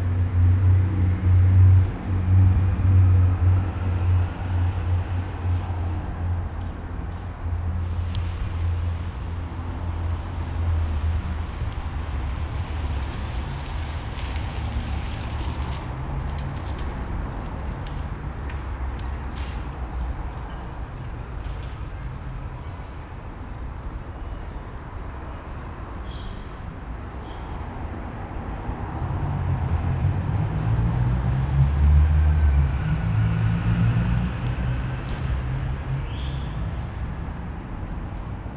karl-heine-platz auf einer tischtennis-platte. vater & kind auf dem spielplatz, gitarrenmusik aus dem eckhaus schräg rüber. autos.